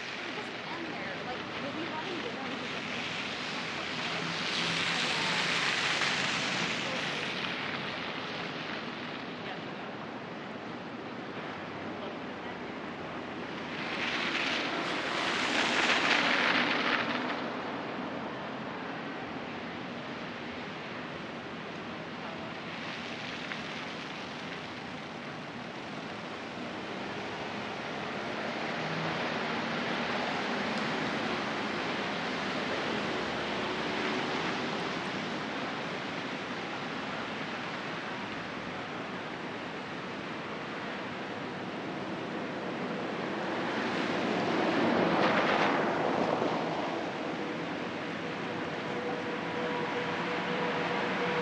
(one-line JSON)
{"title": "Rue Sainte-Catherine O, Montréal, QC, Canada - Peel Street", "date": "2020-12-30 16:26:00", "description": "Recording at the corner of Peel St and Saint-Catherine St. There is a bit more chatter from pedestrians and continuous cars travelling through the snowy conditions. This would be a usual time in which traffic would increase had workplaces been on regular schedules and opened.", "latitude": "45.50", "longitude": "-73.57", "altitude": "50", "timezone": "America/Toronto"}